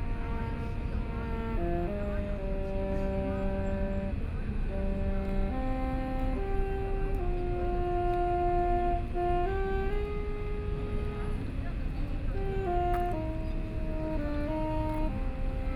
An old man is practicing saxophone playing, Sony PCM D50 + Soundman OKM II
Taipei City, Taiwan, 27 September 2013, 19:19